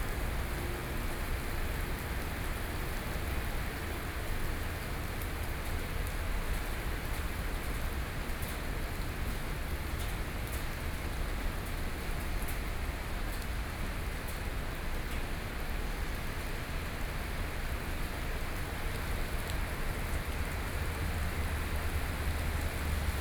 Taipei, Taiwan - Before the coming storm
Before the coming storm, Sony PCM D50 + Soundman OKM II